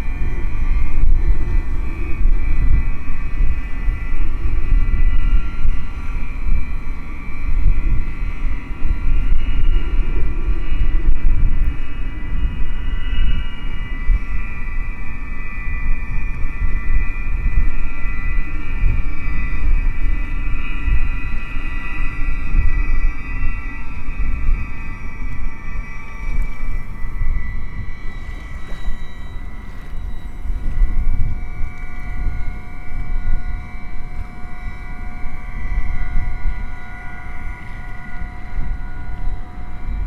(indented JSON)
{
  "title": "Townparks, Co. Leitrim, Ireland - The Sunken Hum Broadcast 357 - Aeolian Wind Harps In Carrick On Shannon Part 3 - 23 December 2013",
  "date": "2013-12-23 16:00:00",
  "description": "One of my favorite sounds, one more time.....the wind harps of Carrick on Shannon.",
  "latitude": "53.94",
  "longitude": "-8.09",
  "altitude": "42",
  "timezone": "Europe/Dublin"
}